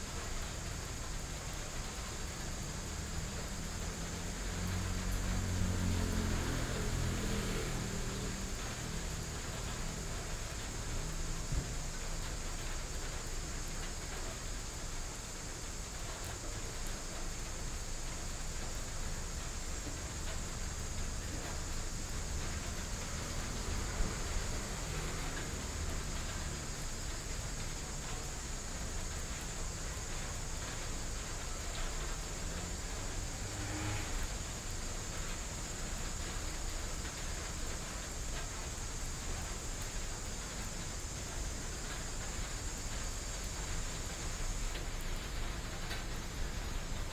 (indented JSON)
{
  "title": "黎孝公園, Taipei City - Rainy Day",
  "date": "2015-07-04 18:53:00",
  "description": "Traffic Sound, in the park, Rainy Day",
  "latitude": "25.02",
  "longitude": "121.56",
  "altitude": "21",
  "timezone": "Asia/Taipei"
}